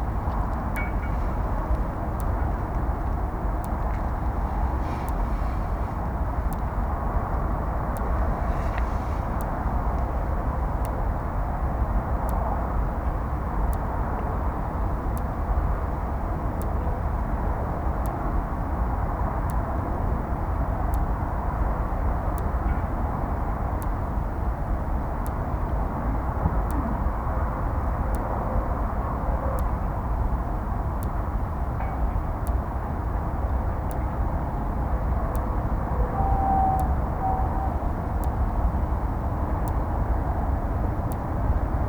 Drammendorfer Polder, Kubitzer Bodden - Electric sheep fence at dike
Electric sheep fence at dike sparks and catches wind
Vorpommern-Rügen, Mecklenburg-Vorpommern, Deutschland, 9 January 2022, 14:52